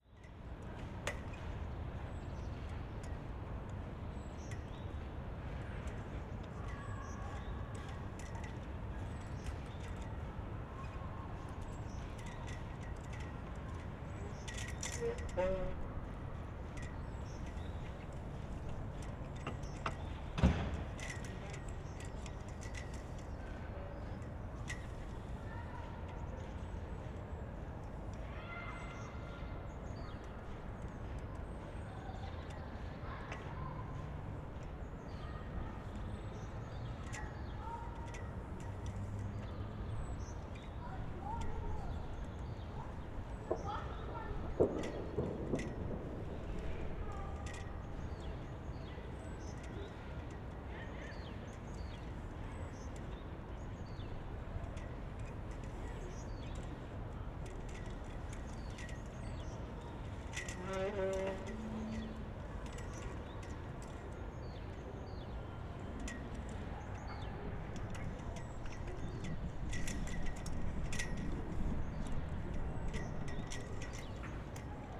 Berlin Marzahn, residential area, street ambience on a sunday afternoon, a flag pol squeaks, some distant voices
(SD702, AT BP4025)
Berlin, Germany, 22 January 2017